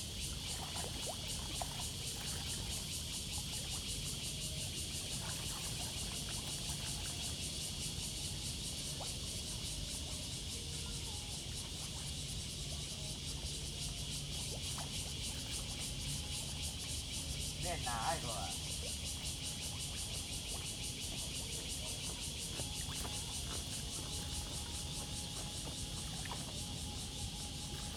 Tamsui River, Tamsui Dist., New Taipei City - Sitting in the river
On the river bank, Acoustic wave water, Cicadas cry
Zoom H2n MS+XY
New Taipei City, Taiwan, 18 July, ~07:00